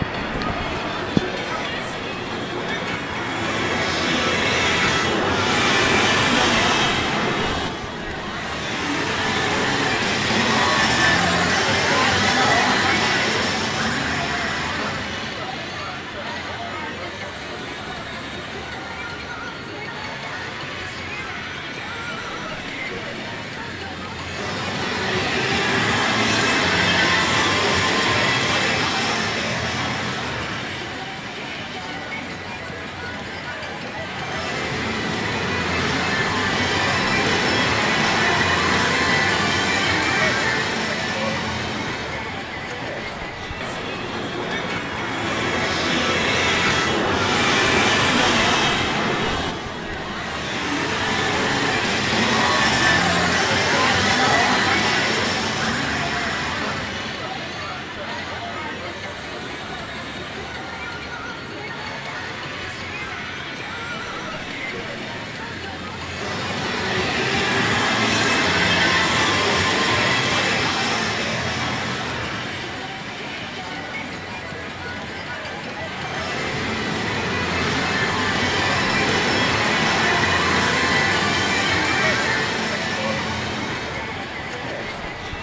Akihabara Tokyo - In front of Patchinko Hall
Sliding door of patchinko hall opens from time to time and let out patchinko hell.